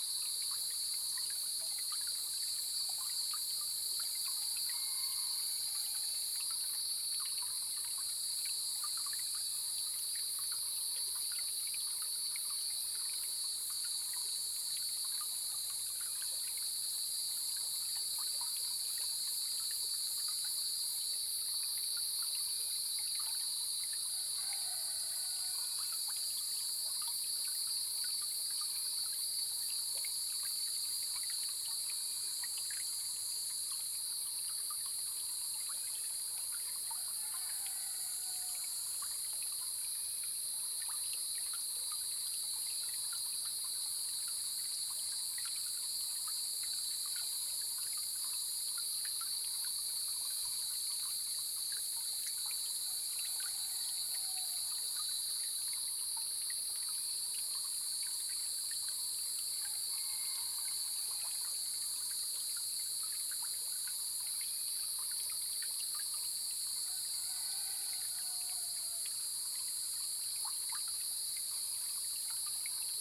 種瓜坑溪, 成功里 Puli Township - Cicadas and Stream
Cicadas cry, Sound of water
Zoom H2n Spatial audio
Nantou County, Taiwan, 13 July 2016